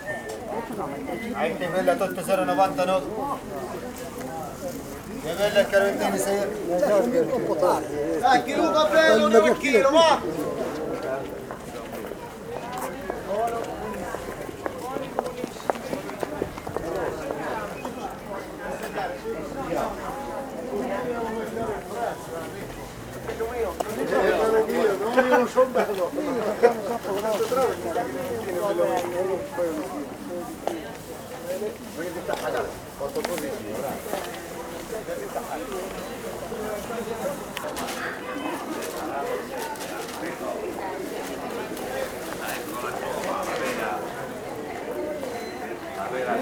Market square, Broni (PV), Italy - Sunday morning open air market
Open air market in the small town of Broni. Quiet people passing by and talking, sellers from different parts of the world call out for shoppers to buy their goods (fruit, vegetable, cheese) by repeating the same leit motiv endelssly ("la vera toma del Piemonte, Varallo Sesia")
Province of Pavia, Italy, 21 October 2012, 10:00